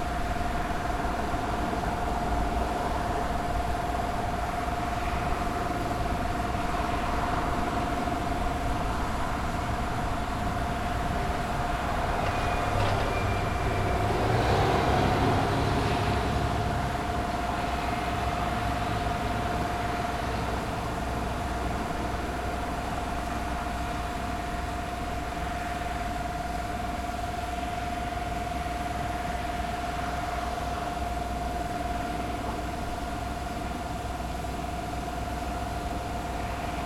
Boleslawa Chrobrego housing estate, Poznan - man sharpening knives
a man sharpening restaurant knives on an electric grinder (sony d50 internal mics)